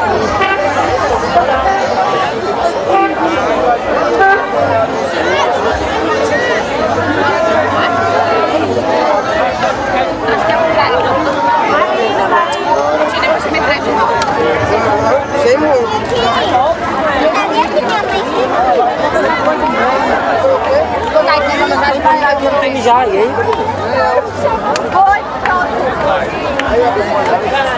In the beach - Happy New Year!
1 January, ~01:00